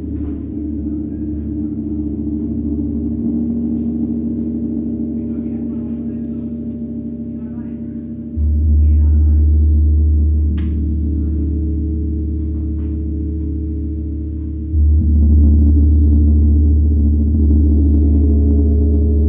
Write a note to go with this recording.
In der Traugott Weise Schule einer Förderschule mit dem Schwerpunkt geistige Entwicklung - im Klangraum. Der Klang der Gongs und Klangschalen. Inside the Traugott Weise school at the sound room. The sound of the gongs, chimes and sound bowls. Projekt - Stadtklang//: Hörorte - topographic field recordings and social ambiences